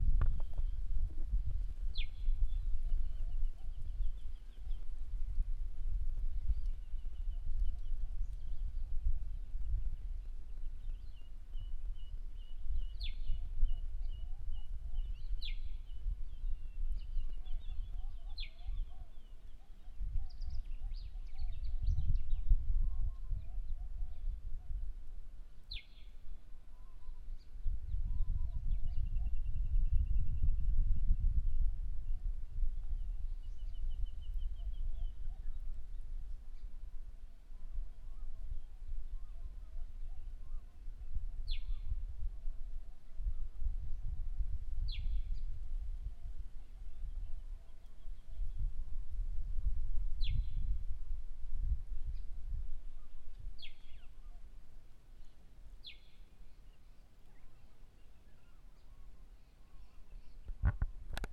Point Stuart NT, Australia - Dawn chorus Mary River
Edge of an ecotone between savanna woodland and bamboo vine thicket on billabong next to mary river